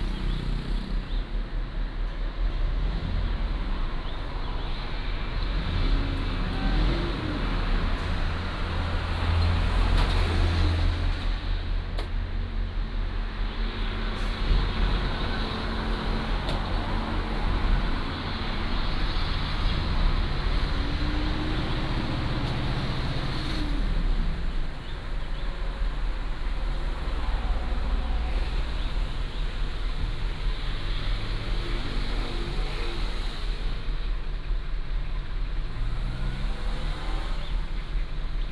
桃米里, Puli Township - At the junction
At the junction, Traffic Sound, Birdsong